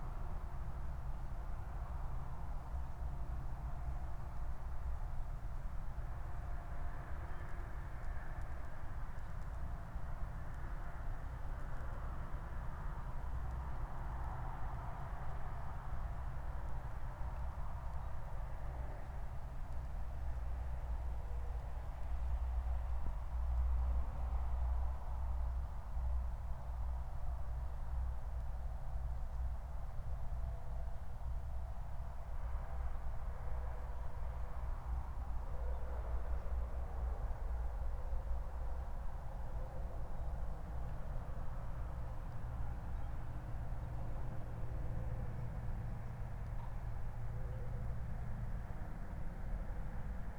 Moorlinse, Berlin Buch - near the pond, ambience
03:19 Moorlinse, Berlin Buch